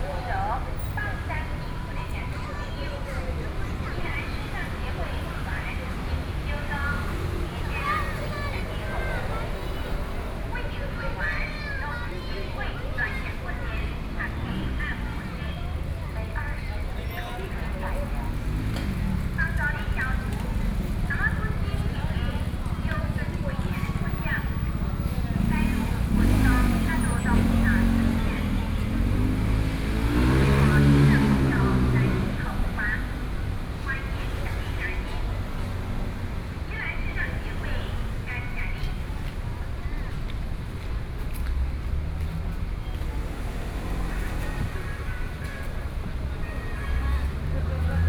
Zhongshan Park, Luodong Township - in the Park
in the Park, Hot weather, Traffic Sound
Luodong Township, Yilan County, Taiwan, 27 July 2014